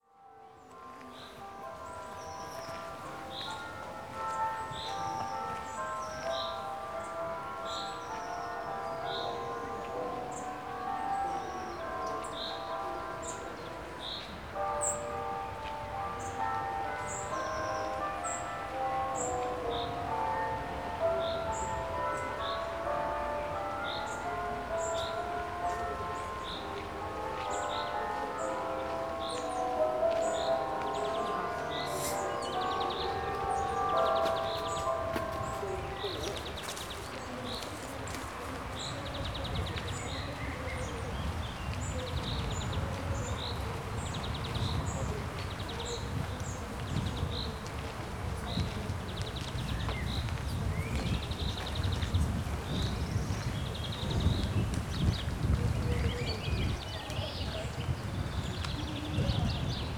Kornik, arboretum at the castle - at the path through arboretum
bells from a nearby church. a faint shreds of megaphone voice carried by the wind from a great distance. birds chirping away.